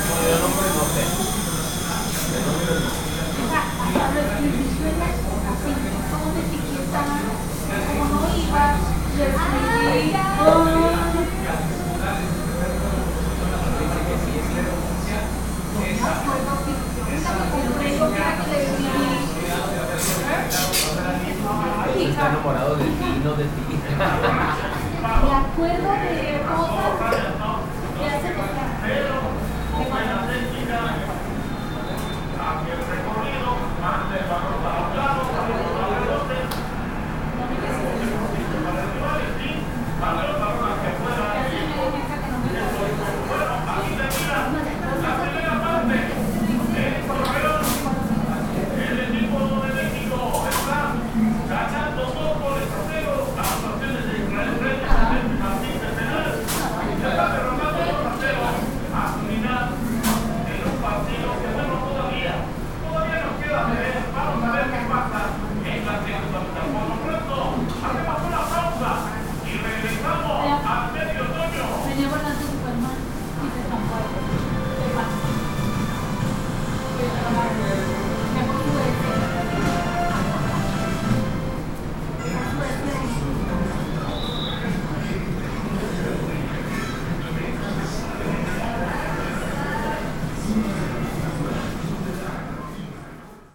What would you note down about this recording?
Burger shop where they also sell nachos, hot dogs, milkshakes, and stuff like that. You can hear them preparing milkshakes, customers chatting at a nearby table, the cutting of plastic bags to pack the food to go, and the televisions on. I made this recording on june 11th, 2022, at 9:58 p.m. I used a Tascam DR-05X with its built-in microphones. Original Recording: Type: Stereo, Negocio de hamburguesas donde también venden nachos, perros calientes, malteadas y cosas de esas. Se alcanza a escuchar que están preparando malteadas, clientes platicando en una mesa cercana, el corte de bolsas de plástico para empacar la comida para llevar y las televisiones prendidas. Esta grabación la hice el 11 de junio 2022 a las 21:58 horas. Usé un Tascam DR-05X con sus micrófonos incorporados.